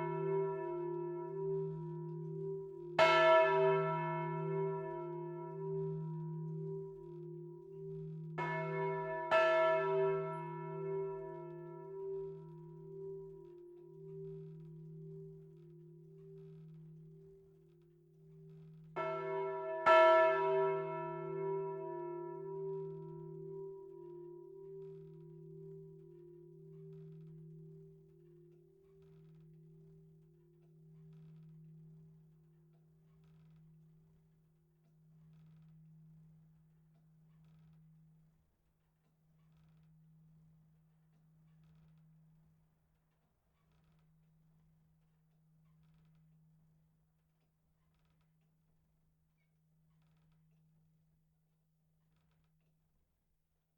Rue de l'Église, Rumegies, France - Rumegies (Nord) - église
Rumegies (Nord)
église - la volée automatisée